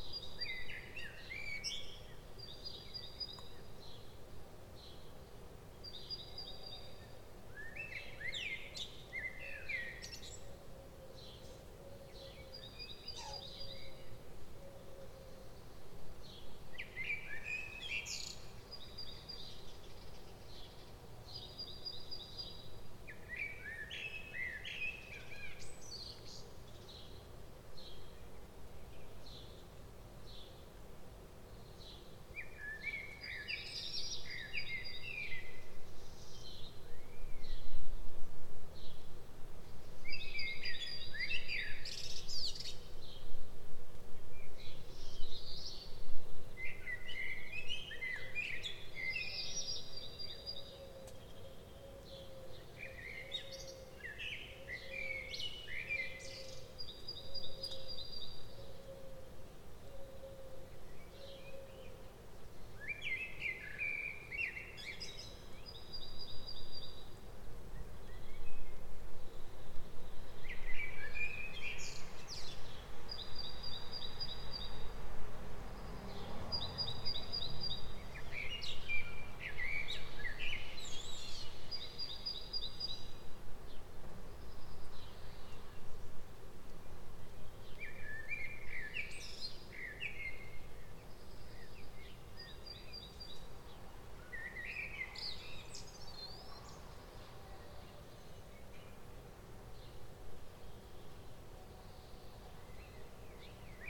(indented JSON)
{
  "title": "Rue Alphonse Daudet, Villeneuve-sur-Lot, France - Rec 20 04 20 07h35",
  "date": "2020-04-20 07:35:00",
  "description": "mec suivant du 20/04/2020 à 07h35 effectué en XY à la fenêtre de mon studio micros DM8-C de chez Prodipe (dsl) XLR Didier Borloz convertisseur UAD Apollo 8 Daw Cubase 10 pro . Pas de traitement gain d'entrée +42Db . Eléments sonores entendus essentiellement des oiseaux quelques véhicules et des sons de voisinage",
  "latitude": "44.40",
  "longitude": "0.71",
  "altitude": "62",
  "timezone": "Europe/Paris"
}